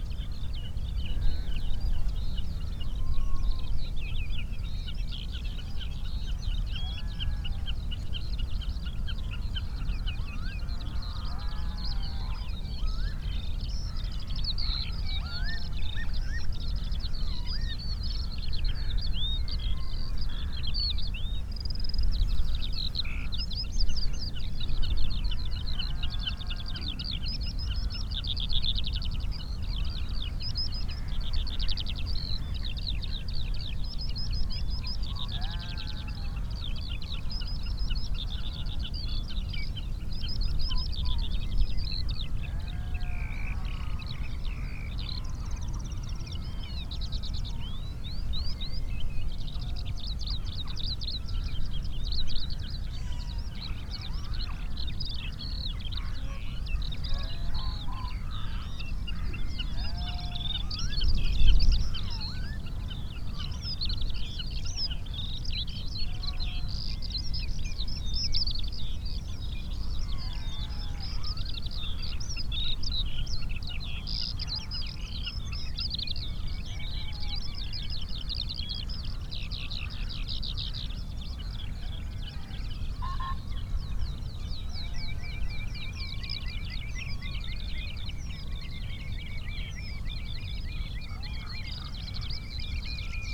five bar gate soundscape ... rspb loch gruinart ... sass lodged in the bars of a gate ... bird calls and song from ... snipe ... redshank ... lapwing ... greylag ... sedge warbler ... skylark ... jackdaw ... pheasant ... background noise ...